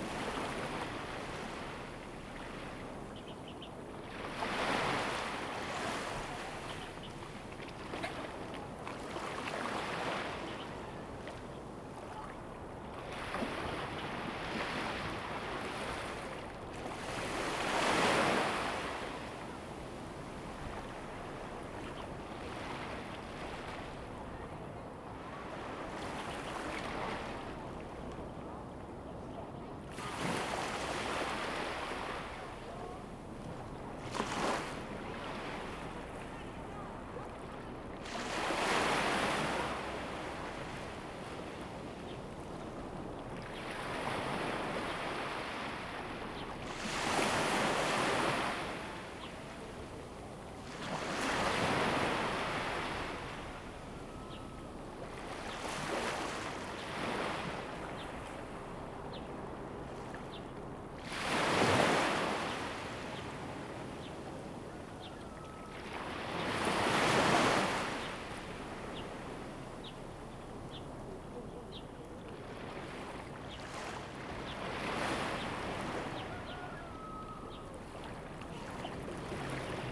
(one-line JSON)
{"title": "烏石鼻漁港, Taiwan - Small fishing port", "date": "2014-09-08 15:02:00", "description": "Thunder and waves, Sound of the waves, Small fishing port, Tourists\nZoom H2n MS+XY", "latitude": "23.23", "longitude": "121.42", "altitude": "7", "timezone": "Asia/Taipei"}